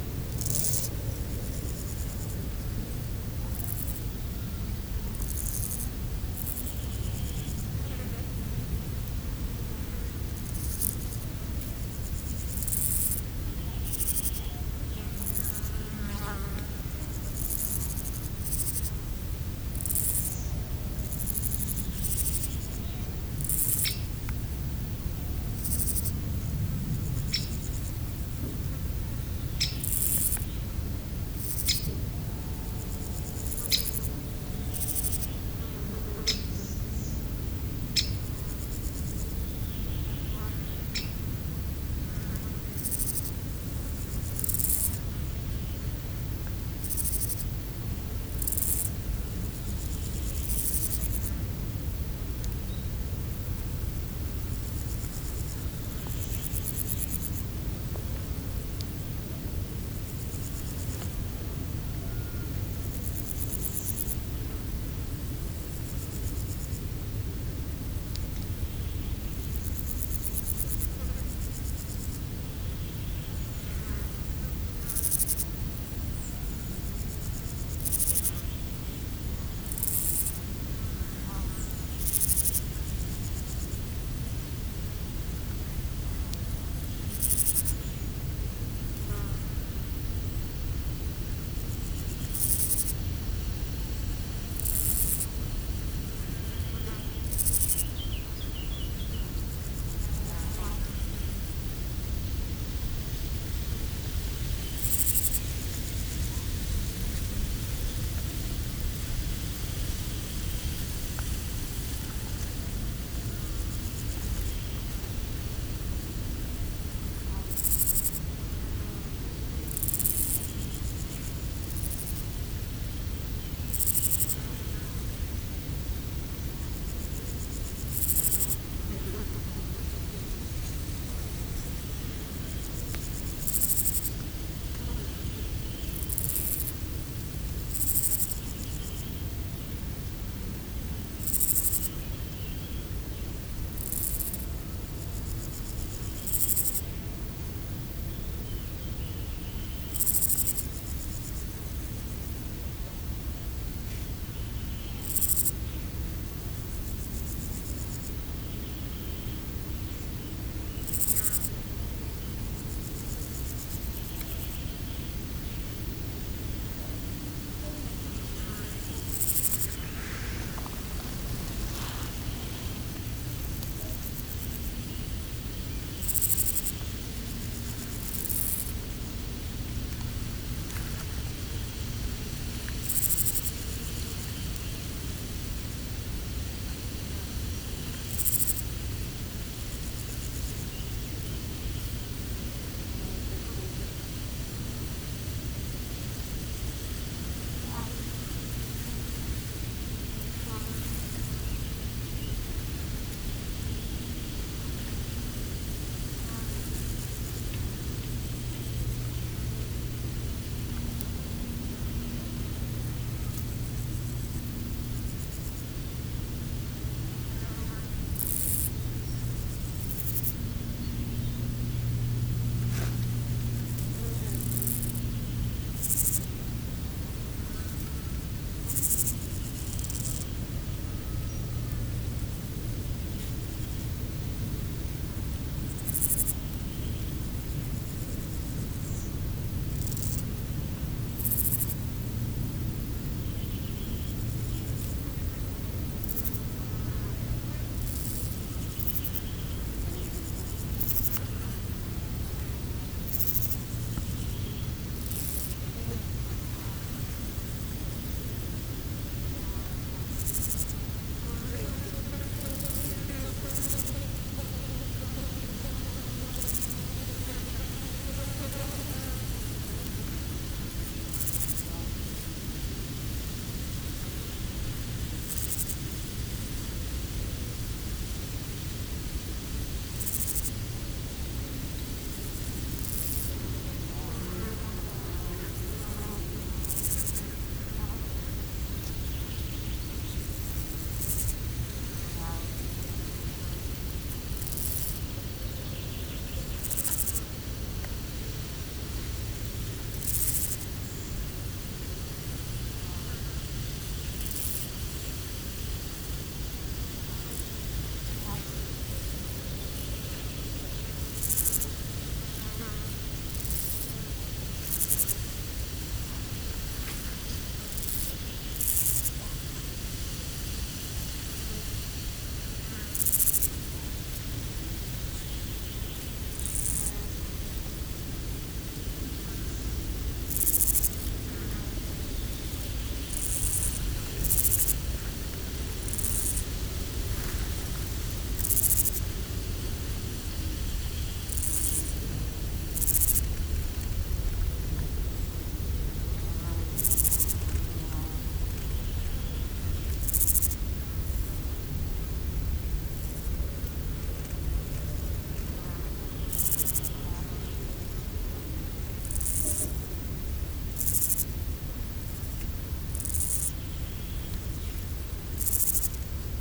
Saint-Martin-de-Nigelles, France - Grasshoppers
On a very hot summer day, grasshoppers singing in the tall grass burned by the sun.